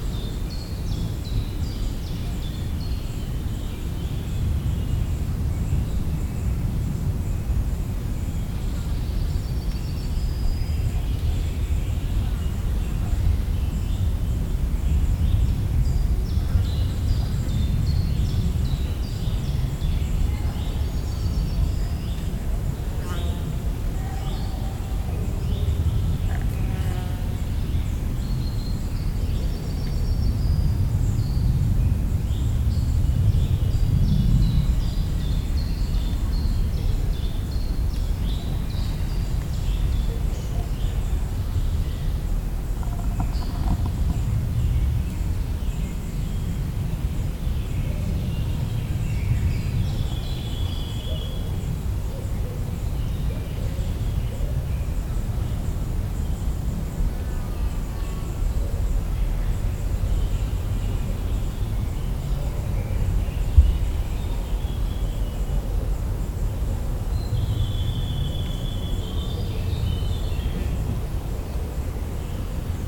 Forest, Biała Wielka, Poland - (339 ORTF) Forest atmosphere
The atmosphere from the forest - afternoon in July.
Recorded with internal mics of Sony PCM D100
powiat częstochowski, województwo śląskie, Polska, July 2018